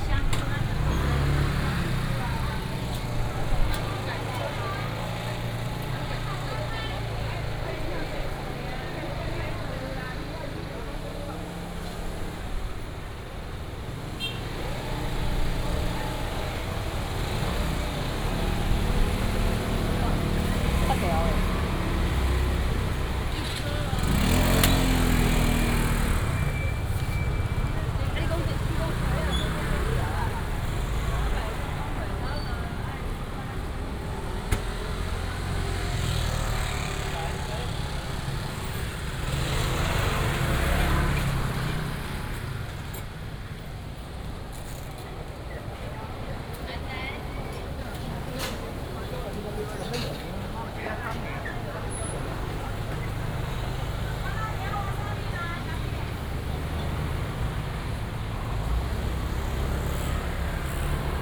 鳳山公有第二零售市場, Fengshan Dist., Kaohsiung City - in traditional market blocks
Walking in traditional market blocks, motorcycle
Kaohsiung City, Taiwan, 30 March, ~10:00